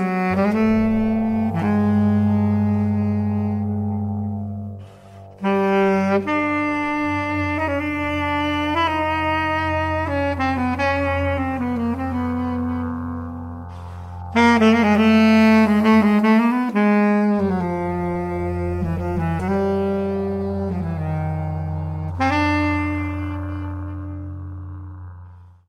Excerpt from a private concert recorded at Gallery Schmidt-Maczollek, nov. 29, 2007. From the concert series "Bitte nicht fuettern" (i. e. Don't feed) taking place in various private spaces.
Players: Thomas Heberer, tp - Matthias Muche, trb - Dirk Raulf, sax
Galerie Schmidt-Maczollek